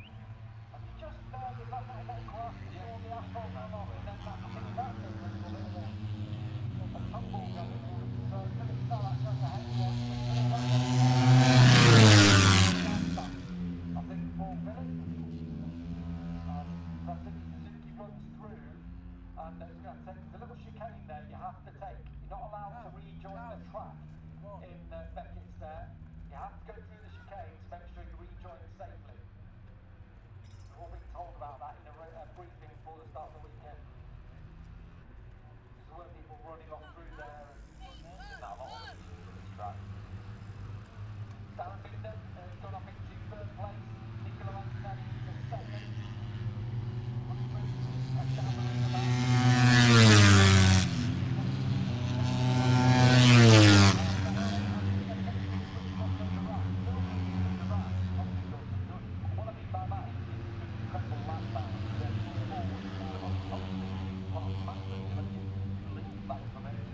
Silverstone Circuit, Towcester, UK - british motorcycle grand prix ... 2021
moto three free practice two ... maggotts ... dpa 4060s to Mixpre3 ...
August 2021